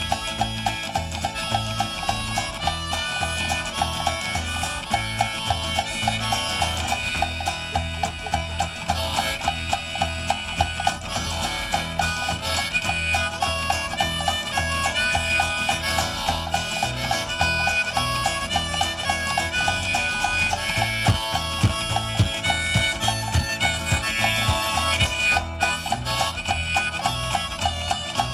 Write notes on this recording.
street musician with an incredible self made music vehicle, full of toys, moving puppets and funny machinery